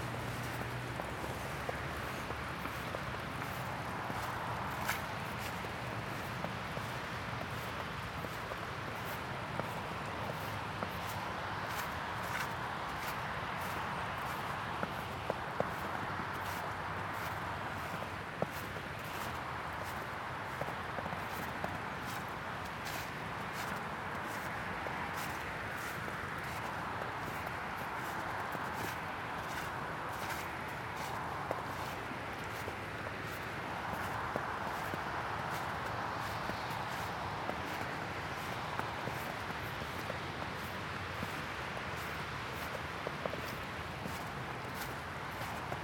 Contención Island Day 4 inner southwest - Walking to the sounds of Contención Island Day 4 Friday January 8th
The Drive Moor Crescent Duke’s Moor Westfield Oaklands Oaklands Avenue Woodlands The Drive
Snow falling
The moor frozen
churned to sculpted mud at the gate
humans as cattle
Walking through snow
step across wet channels
that head down to the burn
stand inside an ivy tree
surrounded by dripping
North East England, England, United Kingdom, 8 January, 11:45